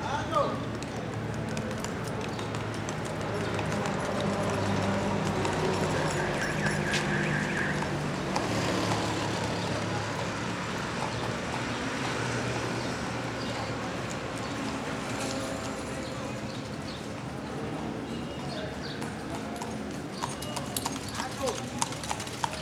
Santiago de Cuba, calle Alameda, near bus terminal